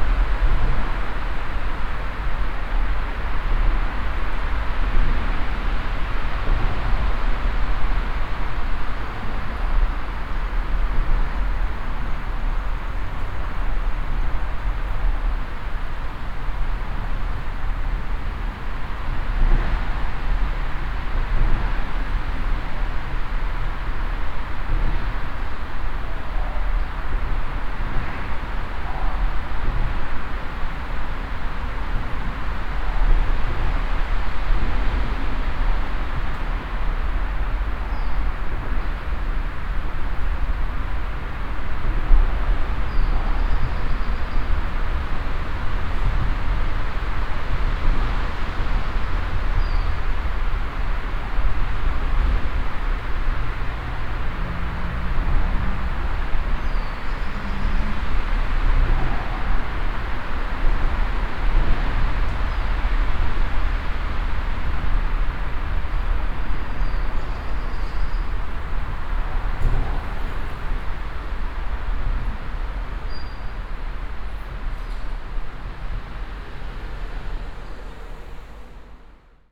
cologne, im sionstal, under bridge
soundmap nrw: social ambiences/ listen to the people in & outdoor topographic field recordings